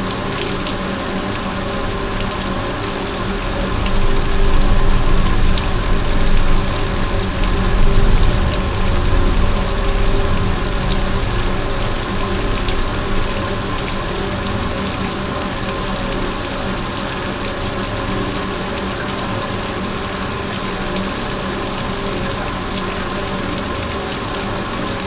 Vyžuonos, Lithuania, small dam
combined recording of small dam: omni and geophone
Utenos apskritis, Lietuva